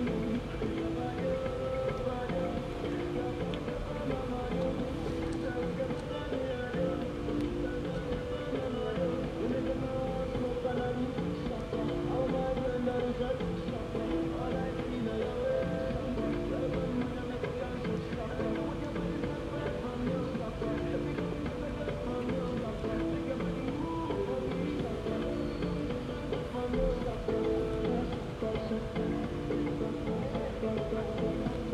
Midnight music playing at Miradouro da Penha de França as heard from down the hill, accompanied by fridge hum and dogs howling at the pink full moon. Recorded with binaural microphones onto a Sony PCM-D50, under State of Emergency lockdown measures, in Lisbon, Portugal.
Área Metropolitana de Lisboa, Portugal